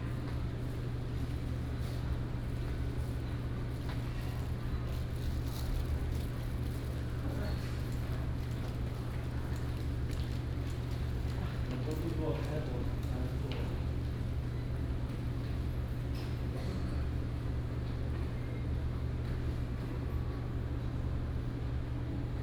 共同教學館, National Taiwan University - At the university
At the university, Bicycle sound, Footsteps, Bell sound
4 March, ~17:00